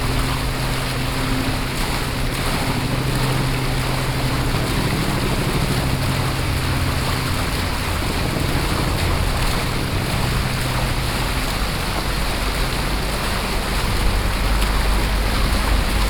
Norway, Oslo, Oslo Radhus, Fountain, water, binaural
Oslo, Norway, 3 June, ~11:00